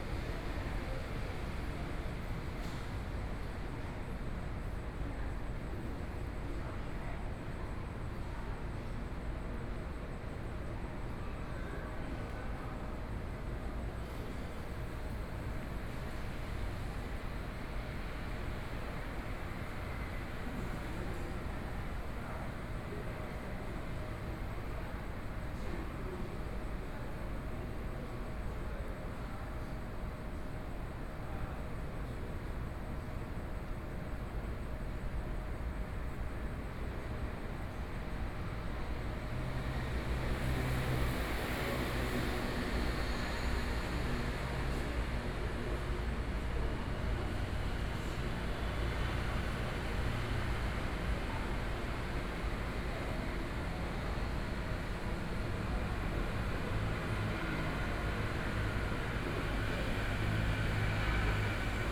Qiyan Station, Taipei City - Platform
in the Platform, Sony PCM D50 + Soundman OKM II